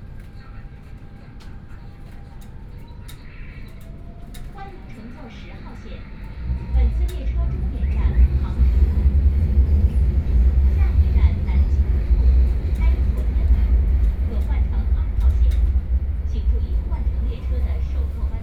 from North Sichuan Road station to Yuyuan Garden station, Binaural recording, Zoom H6+ Soundman OKM II